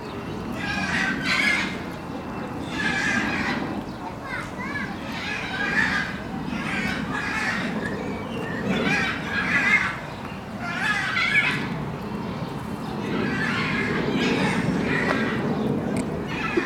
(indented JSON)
{
  "title": "Jardim Zoológico, Lisbon, Portugal - Lisbon Zoo",
  "date": "2008-06-19 16:18:00",
  "description": "Lisbon zoo ambiance, people, animals, birds",
  "latitude": "38.74",
  "longitude": "-9.17",
  "altitude": "72",
  "timezone": "Europe/Lisbon"
}